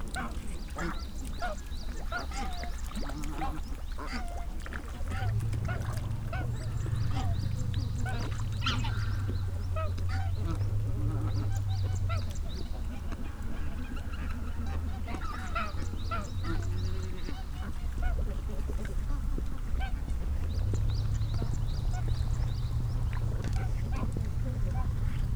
문산 철새 Migratory geese feeding
문산_철새_Migratory geese feeding...a large group of these voxish wild migratory geese were feeding liberally among wintry rice fields...they appeared to vocalize while eating with low chesty, throaty sounds...and to socialize using also mighty nasal honks and squawks...increasing human use of this area, such as construction of new houses and businesses in this valley, is apparent...human/wild-life convergence seems evident in this recording...
경기도, 대한민국, 11 February 2021, 14:00